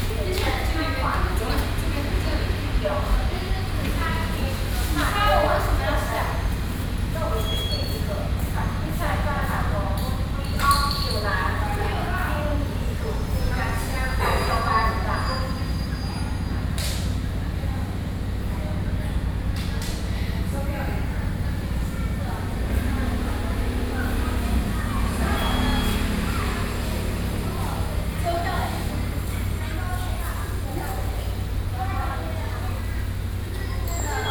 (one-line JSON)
{"title": "Shuangxi Station, New Taipei City - Train station hall", "date": "2012-06-29 17:34:00", "latitude": "25.04", "longitude": "121.87", "altitude": "34", "timezone": "Asia/Taipei"}